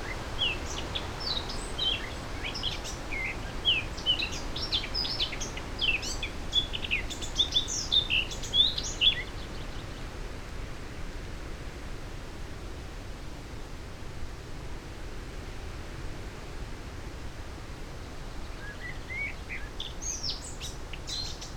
Green Ln, Malton, UK - blackcap song soundscape ...
blackcap song soundscape ... blackcap moving from song post down a hedgerow and back ... SASS ... bird song ... call ... from chaffinch ... yellowhammer ... whitethroat ... corn bunting ... pheasant ... goldfinch ... voice at one point on the phone ...